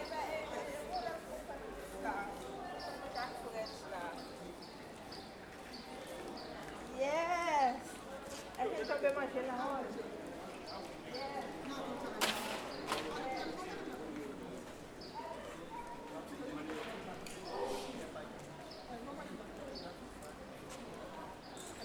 This recording is one of a series of recording mapping the changing soundscape of Saint-Denis (Recorded with the internal microphones of a Tascam DR-40).
27 May, ~11:00